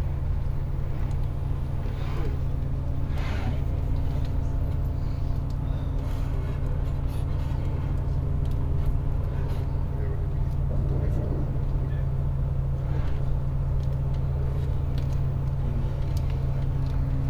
Tarifa harbour, inside Speed Ferry